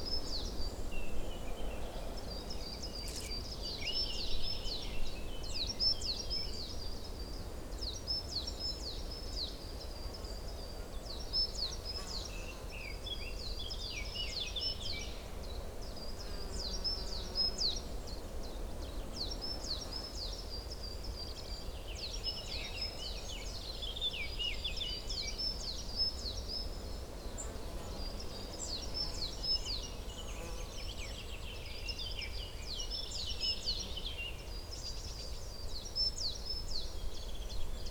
Unnamed Road, Črniče, Slovenia - Veliki Rob
Two meters from the top of the hill. You can hear wind and birds. Microphones where placed on a bush. Mic: Lom Usi Pro.
Slovenija, 28 June 2020